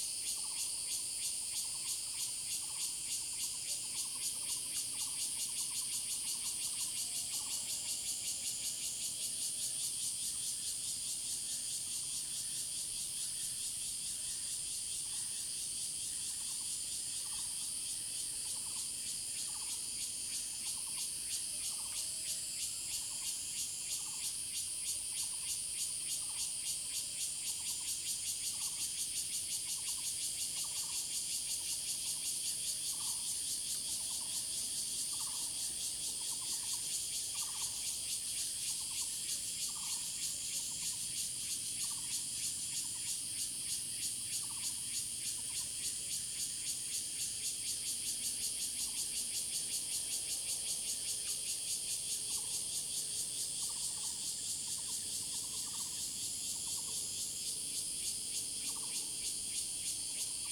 明峰村, Beinan Township - Morning in the mountains
Morning in the mountains, Cicadas sound, Birdsong, Traffic Sound
Zoom H2n MS +XY